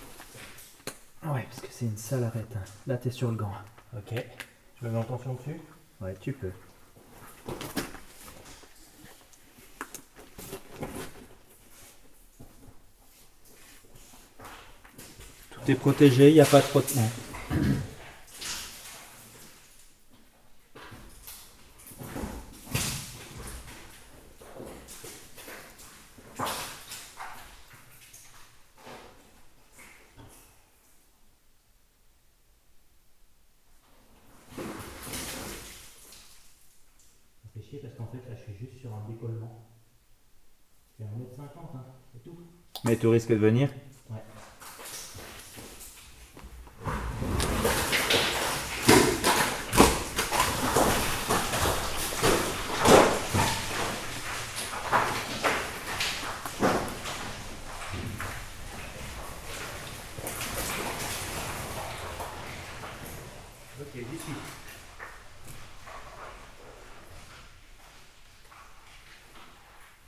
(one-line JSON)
{"title": "Saint-Martin-le-Vinoux, France - Lachal mine", "date": "2017-03-28 11:00:00", "description": "We are exploring a very inclined tunnel. It's hard to find a way inside the underground mine.", "latitude": "45.23", "longitude": "5.73", "altitude": "738", "timezone": "Europe/Paris"}